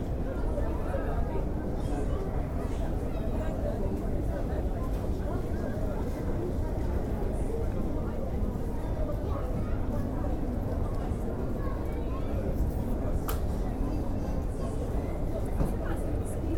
Airport Nice Cote D'Azur (NCE), Rue Costes et Bellonte, Nice, France - Waiting at baggage reclaim
Waiting for the bags to turn up on the carousel, I found myself listening to how quiet the space was, comparatively. Folk waited patiently, a bit bored, listless in the heat, and the carousel didn't have any of the normal shrieks, squeaks, or bangs, but purred quite quietly along, bringing people their luggage in a leisurely way.